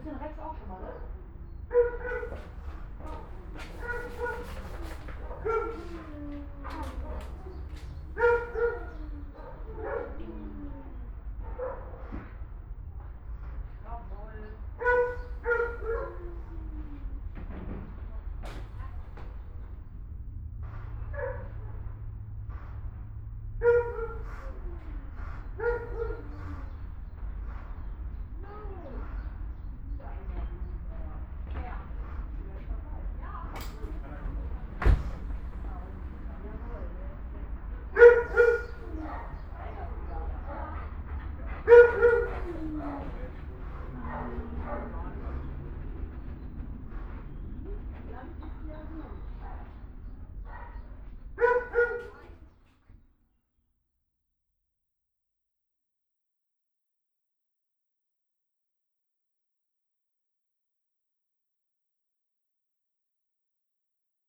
Altenessen - Süd, Essen, Deutschland - essen, albert schweitzer tierheim, dogs
Im Albert Schweitzer Tierheim in der Hunde Abteilung. Die Klänge von Hundegebell als ein Tier vom "Gassi"- Gehen zurück kehrt.
Inside the Albert Schweitzer home for animals in the dog department. The sound of dogs barking as a dog returns from a stroll.
Projekt - Stadtklang//: Hörorte - topographic field recordings and social ambiences
19 April 2014, 13:30